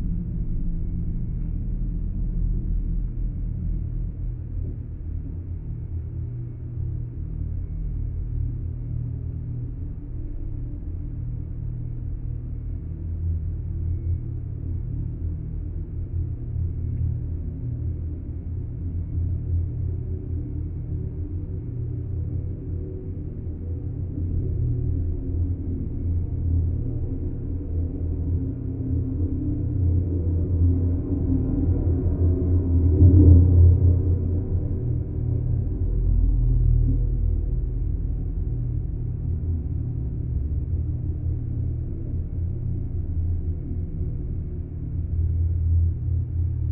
SBG, Puigneró, antigua fábrica - Sótano, resonancias estructura
Escuchando a través de las vigas que soportan la fábrica, en una de las naves vacías del sótano.